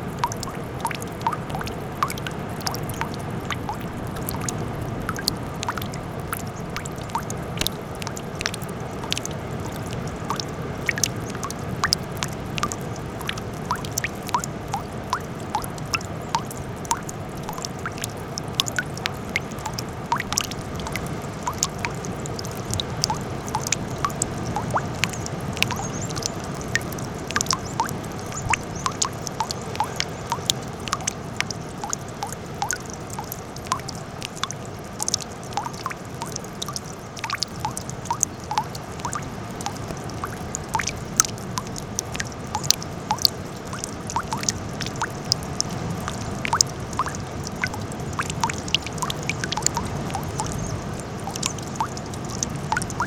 Royal National Park, NSW, Australia - Water drops near the edge of a coastal cliff
Recorded with an Olympus LS-5.
Royal National Park NSW, Australia, 5 July